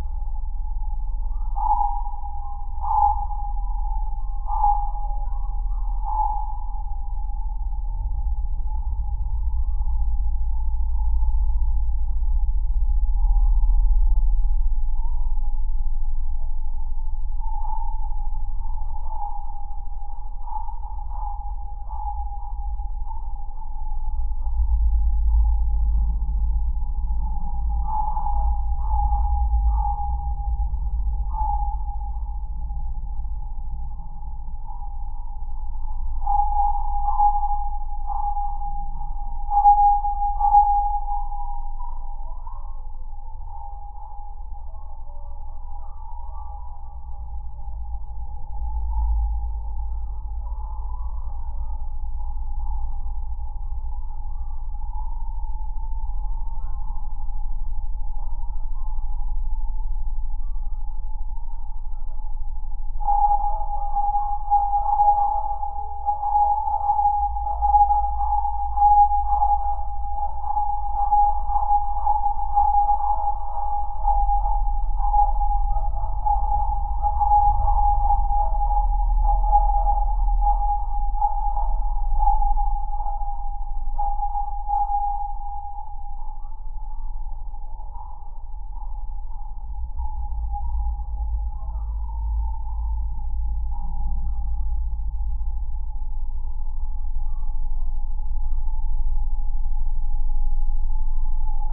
The Sunken Boat, Oulu, Finland - Various sounds recorded through a steel pillar
Dogs barking and other various sounds heard through a steel pillar of an installation 'The Sunken Boat' by Herbert Dreiseitl in Toppilansaari, Oulu. Recorded with LOM Geofón and Zoom H5. Gain adjusted and low-pass filter applied in post.
Manner-Suomi, Suomi, 31 May 2020